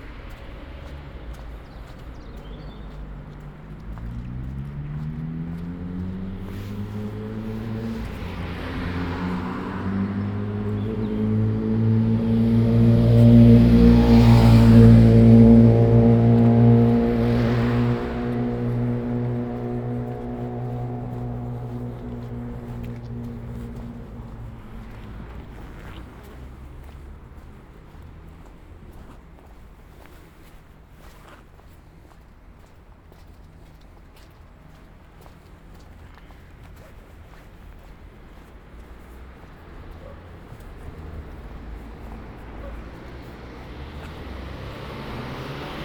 Ascolto il tuo cuore, città. I listen to your heart, city. Several chapters **SCROLL DOWN FOR ALL RECORDINGS** - “Shopping in the re-open market at the time of covid19” Soundwalk
“Shopping in the re-open market at the time of covid19” Soundwalk
Chapter XXIII of Ascolto il tuo cuore, città. I listen to your heart, city.
Thursday March 26 2020. Shopping in the re-open air square market at Piazza Madama Cristina, district of San Salvario, Turin, sixteen days after emergency disposition due to the epidemic of COVID19.
Start at 11:25 a.m., end at h. 00:01 p.m. duration of recording 36’11”
The entire path is associated with a synchronized GPS track recorded in the (kml, gpx, kmz) files downloadable here:
26 March, ~11:00